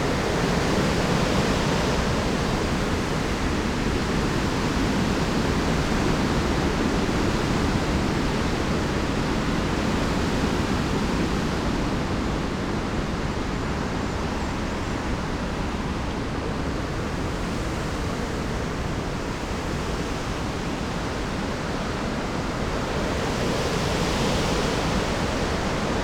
storm gareth blows through the ampitheatre ... calcott moor nature reserve ... pre-amped mics in a SASS ... very occasional bird song ...